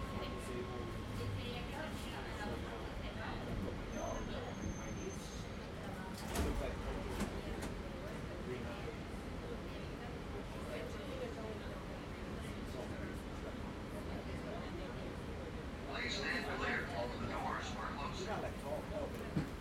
East Hollywood, Los Angeles, Kalifornien, USA - LA - underground train ride
LA - underground train ride, red line to union station, passengers talking, announcements, doors opening and closing;
CA, USA